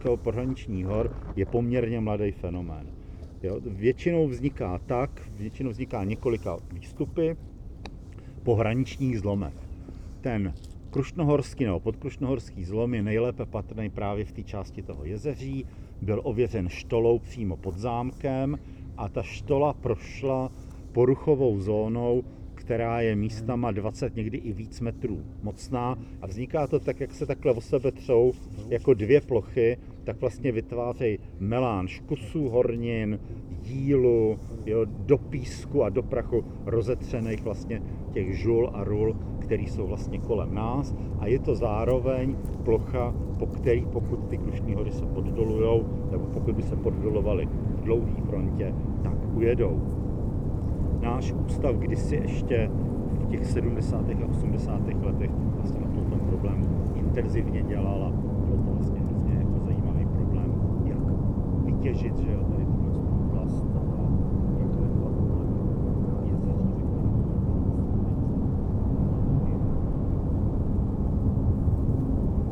Jezeří zámek, Horní Jiřetín, Česká republika - Sounds of machines and words of Václav Cílek
Místo v kopcích nad Zámkem Jezeří, kde jsou údajně rituální paleolotické kameny. Václav Cílek mluví o proměnách krajiny během cesty pro účastníky Na pomezí samoty